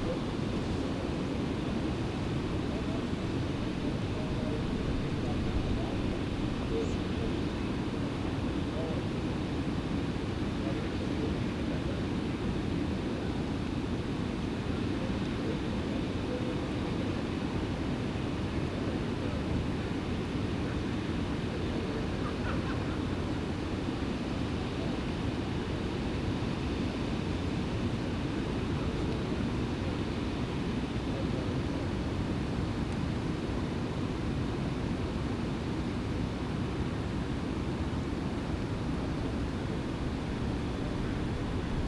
The Hiram M. Chittenden Locks, popularly known as the Ballard Locks, raise and lower boats traveling between freshwater Lake Washington and saltwater Puget Sound, a difference of 20 to 22 feet (depending on tides). A couple hundred yards downstream is a scenic overlook, almost directly beneath the Burlington Northern trestle bridge shown on the cover. From that spot we hear a portrait of commerce in 3-dimensions: by land, by air and by sea.
Major elements:
* The distant roar of the lock spillway and fish ladder
* Alarm bells signifying the opening of a lock
* Boats queuing up to use the lock
* Two freight trains passing overhead (one long, one short)
* A guided tour boat coming through the lock
* Planes and trucks
* Two walkers
* Seagulls and crows
Washington, United States of America, 13 November, 12:06